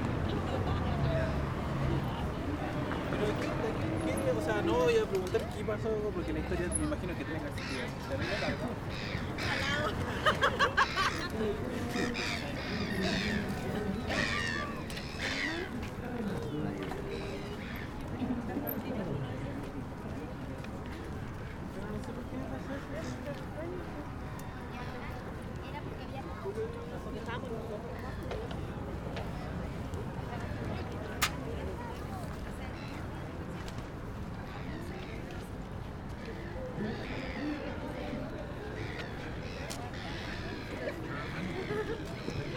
Av. Arturo Prat, Valdivia, Los Ríos, Chili - AMB VALDIVIA HARBOUR TRAFFIC ANIMATED WALLA WALK MS MKH MATRICED
This is a recording of the harbour located in Valdivia. I used Sennheiser MS microphones (MKH8050 MKH30) and a Sound Devices 633.
Región de Los Ríos, Chile, 19 August, 17:00